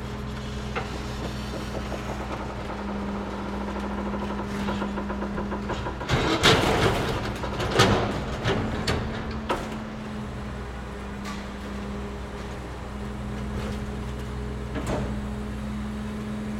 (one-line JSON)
{"title": "E 46th St, New York, NY, USA - Demolition Truck", "date": "2022-04-01 17:30:00", "description": "Demolition truck destroying office furniture.", "latitude": "40.75", "longitude": "-73.97", "altitude": "14", "timezone": "America/New_York"}